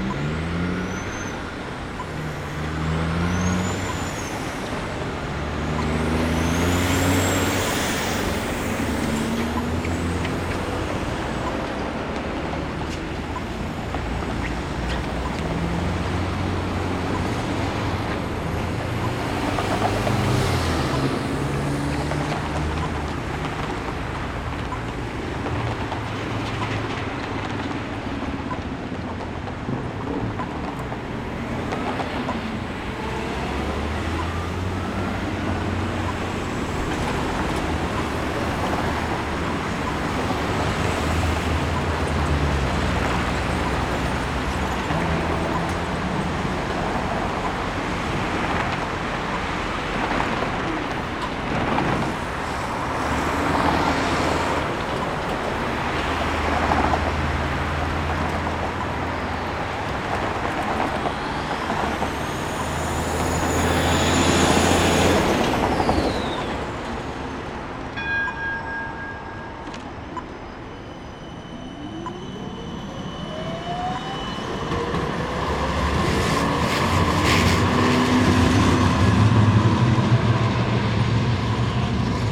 Corner of Alexandra Parade and Nicholson St - Part 4 of peculiar places exhibition by Urban Initiatives; landscape architects and urban design consultants
urban initiatives, landscape architecture, peculiar places